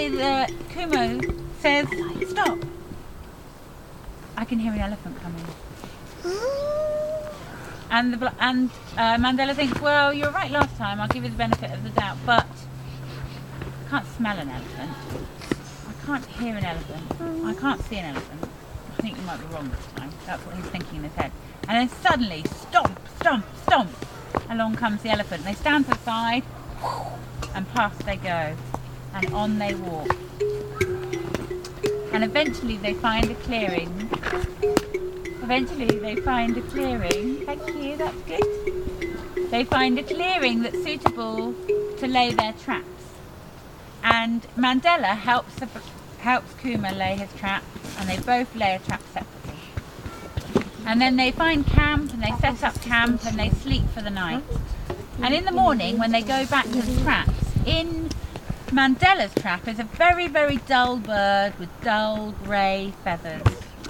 Thorncombe Woods, Dorset, UK - Woodland stories
Dorset Forest School Leader telling stories to children in the woods.
Sounds in Nature workshop run by Gabrielle Fry. Recorded using an H4N Zoom recorder and Rode NTG2 microphone.
Dorchester, Dorset, UK, 6 August 2015